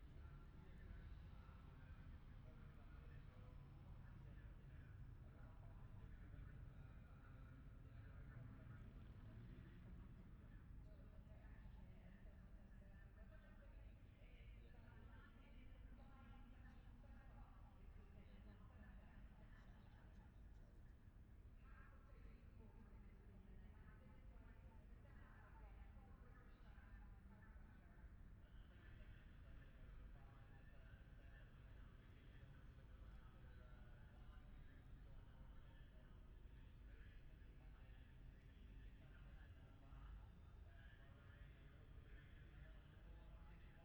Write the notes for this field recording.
moto three qualifying one ... wellington straight ... dpa 4060s to Zoom H5 ...